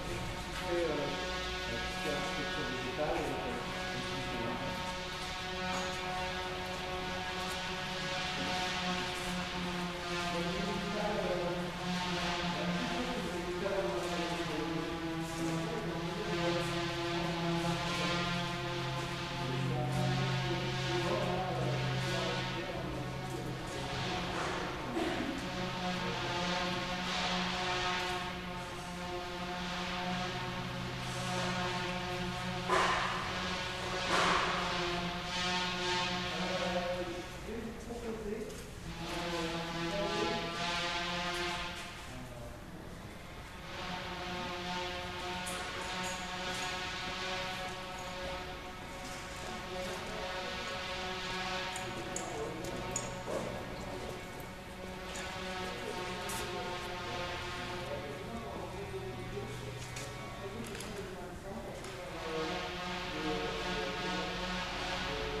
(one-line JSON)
{"title": "Lyon, La Friche R.V.I., guided visit, electric saw", "date": "2010-07-07 17:00:00", "description": "Visit of la Friche RVI, a disabled factory where more than 300 artists live and work. By the end of july 2010 this place will be closed by local authorities.", "latitude": "45.75", "longitude": "4.88", "altitude": "181", "timezone": "Europe/Paris"}